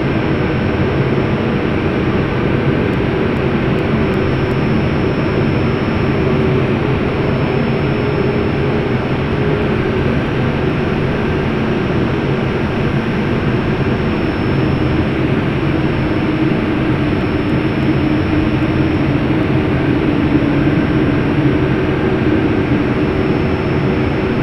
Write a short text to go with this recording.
shotgun att the entrance door of the tower